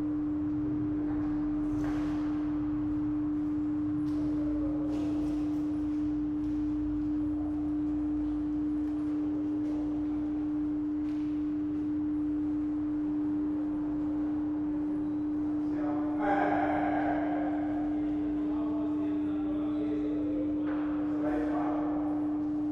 Berlin, Schönleinstr. - station cleaning
subway u8 schönleinstr., workers cleaning the station at night.